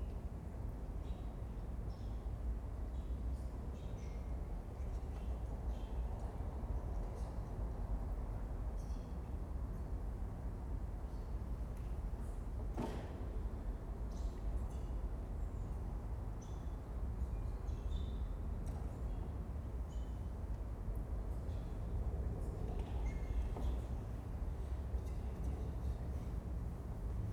a priest and a mourning woman whispering a the russian orthodox church. the priests phone rings.
23 June 2011